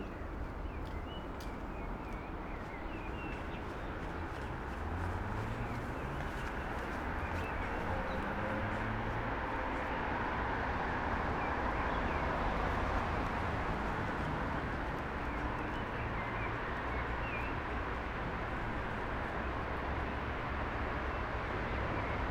evening ambience around one of the main tram stops in Poznan. Despite heavy traffic birds can be heard from bushes around. that one particular bird call always draws my attention when I leave the office in the evening. trains and trams passing. people getting off trams.
Poznan, Poland